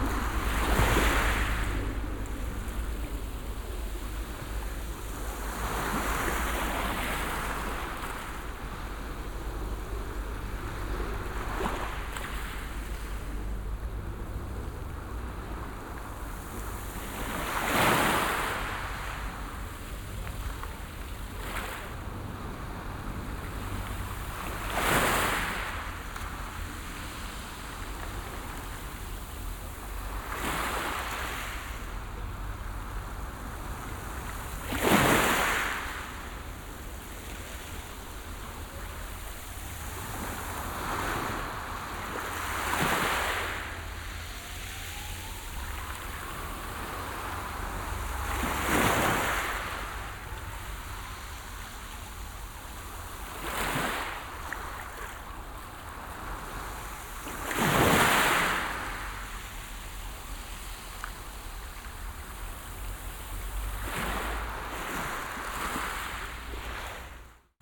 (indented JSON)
{
  "title": "P.º del Mediterraneo, Altea, Alicante, Hiszpania - (27) Helicopter low above the beach",
  "date": "2016-11-08 17:06:00",
  "description": "Binaural recording of a helicopter low above the beach.\nrecorded with Soundman OKM + ZoomH2n\nsound posted by Katarzyna Trzeciak",
  "latitude": "38.60",
  "longitude": "-0.05",
  "altitude": "5",
  "timezone": "Europe/Madrid"
}